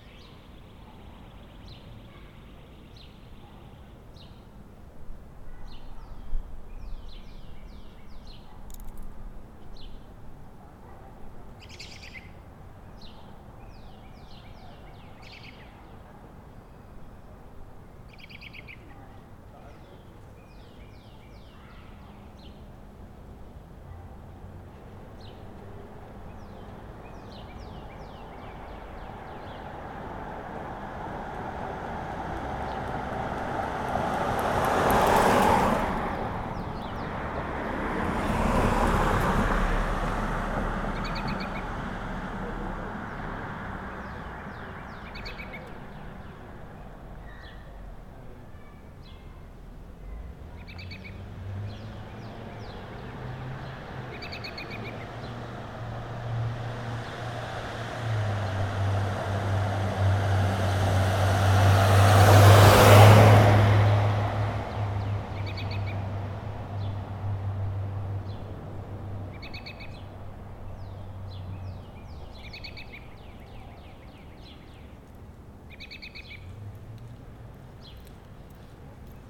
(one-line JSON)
{"title": "Corner of Vesta and Robinwood - Posh residential street in lockdown", "date": "2020-04-08 18:00:00", "description": "Recorded (with a Zoom H5) at an intersection in the expensive Toronto neighbourhood of Forest Hill.", "latitude": "43.70", "longitude": "-79.42", "altitude": "165", "timezone": "America/Toronto"}